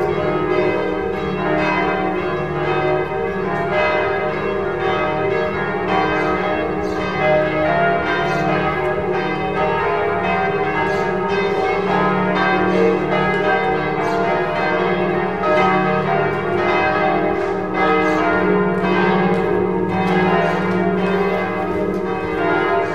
paris, saint gervais, church bells
the sunday bells of the church recorded from outside - in the background some traffic and approaching people
international cityscapes - topographic field recordings and social ambiences
paris, saint gervais church, 12 December 2009